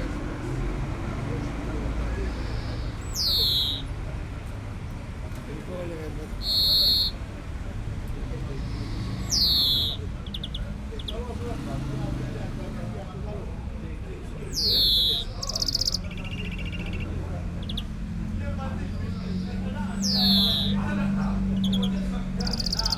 Ħal Tarxien, Malta - men with birds in cages
men of all ages like to carry around their birds, this one is a poor green finch in a tiny cage (SD702, DPA4060)